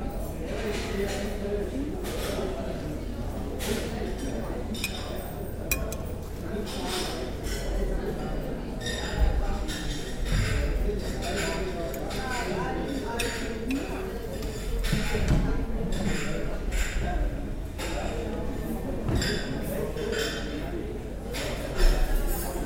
zurich main station, bar Les Arcades
recorded june 16, 2008. - project: "hasenbrot - a private sound diary"